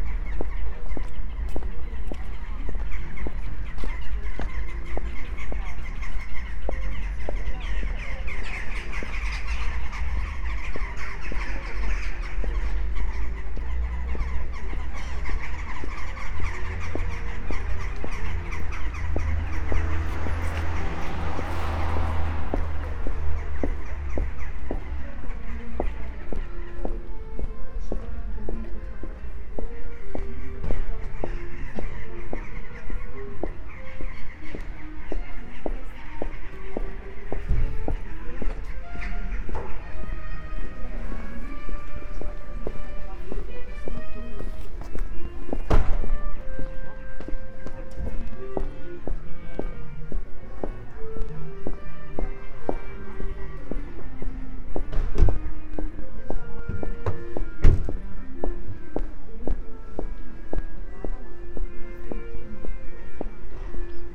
trees around national library, NUK, ljubljana - at dusk
birds in tree crowns at the time of sun dispersing into electric lights, passers by, bicycles, buses, steps, instruments from behind windows, microphones wires ...
Ljubljana, Slovenia